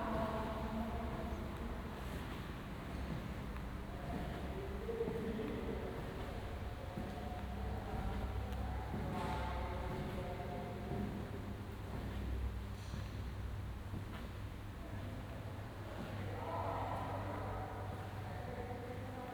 Berlin, Plänterwald station - station walk
walk in s-bahn station Plänterwald, vietnamese flower sellers talking, escalator, elevator, hall ambience. this station seems to be out of service, very few people around
Berlin, Deutschland